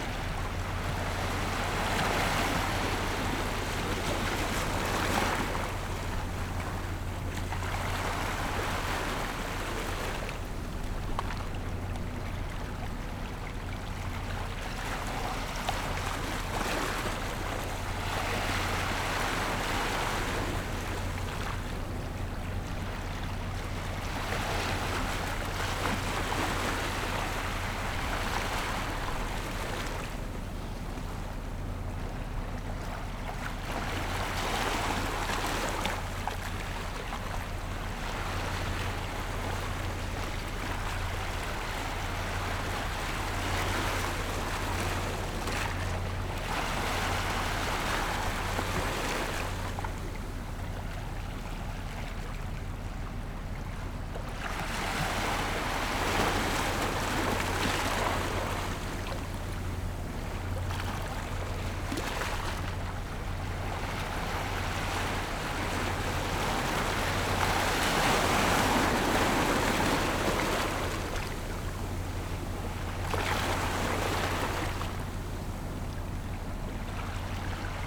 清水村, Nangan Township - Tide
Wetlands, Tide
Zoom H6 +Rode NT4
福建省, Mainland - Taiwan Border, 14 October 2014, ~10:00